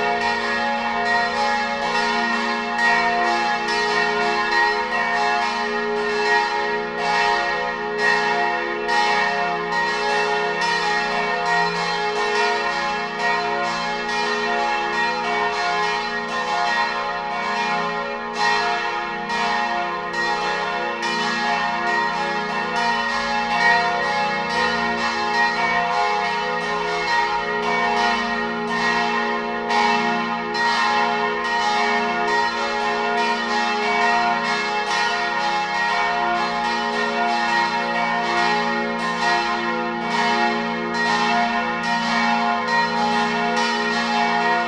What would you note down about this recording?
St. Isztvan Basilicas bells recorded from the window at the fourth floor of adjacent building.